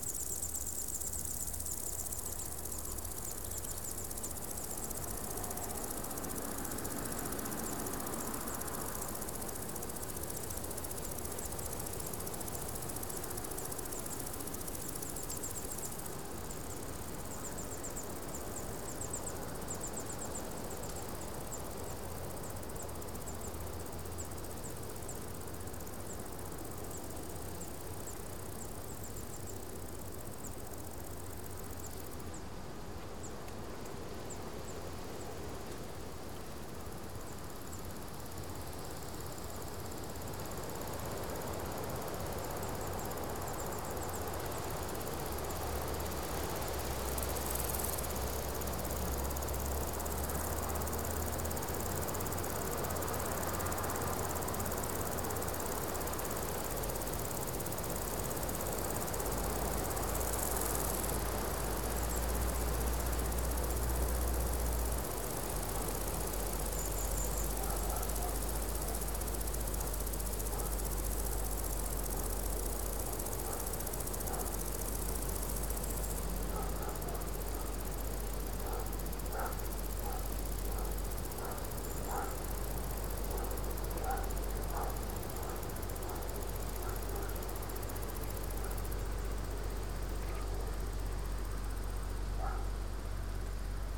hot afternoon, windy, in tree alley in between fields, insect + birds + swallows + distant dogs + wind. equip.: SD722 + Rode NT4.